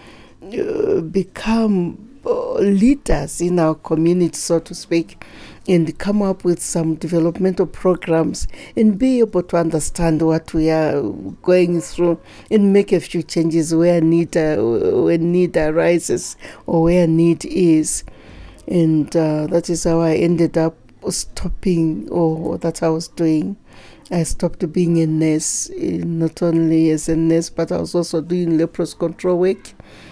Trained as a nurse, and a leprosy controller in her district, Mrs Kalichi didn’t have plans leaving her job and ordinary family life. The male folk among the royal family refused to take up the vacant position of the chief... Eli Mwiinga Namazuminana Kalichi became Chiefteness Mwenda of Chikankata in 2006. In this interview, she unravels for us why she took the step that her brothers refused, and what it meant for her life to take up the traditional leadership position as a woman, and become the first ever Chiefteness in Southern Province… Today, Chiefteness Mwenda is i.a. Deputy Chair of the house of Chiefs...